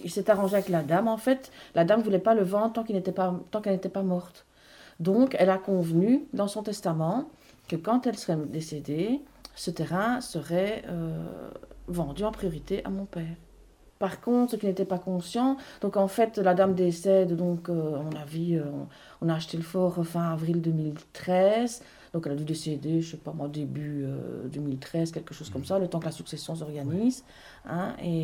Mont-Saint-Guibert, Belgique - Fort Saint-Héribert
Fragment of an interview of Françoise Legros. Her father bought a forest and he acquired almost unexpectedly a massive undeground fortification. She explains what the foundation do, in aim to renovate this old place.
Mont-Saint-Guibert, Belgium, February 2016